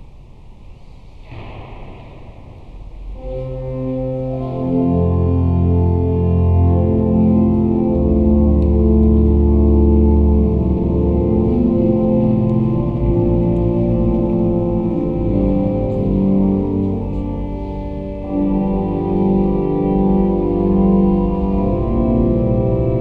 in the big catholic church while a praying ceremony is going on. the church organ and the singing of the vistors, a squeeking door
international cityscapes - social ambiences and topographic field recordings
Rue Saint Antoine, saint paul, 13 October 2009, 17:06